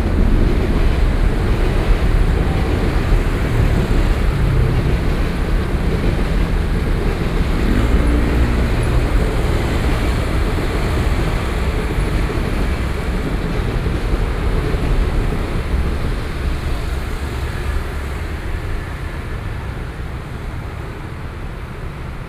Linsen Rd., Taoyuan - in front of the underpass
in front of the underpass, Hours of traffic noise, Sony PCM D50 + Soundman OKM II